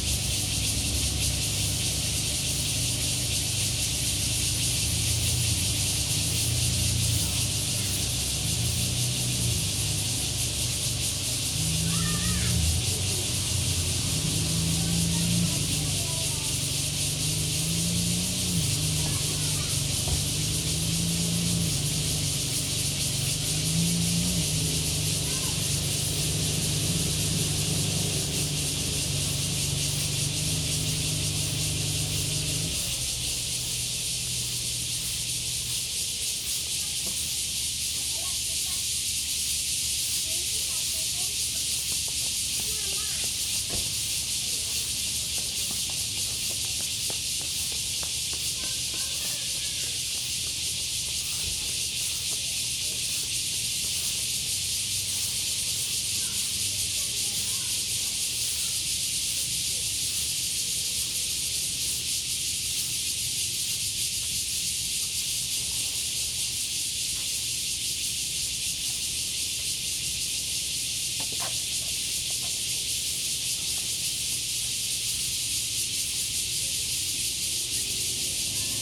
榕堤, Tamsui District 新北市 - Sitting next to the riverbank
Sitting next to the riverbank, Bird calls, Cicadas cry, Traffic Sound, There are fishing boats on the river
Zoom H2n MS+XY
Tamsui District, New Taipei City, Taiwan, 18 July, 07:22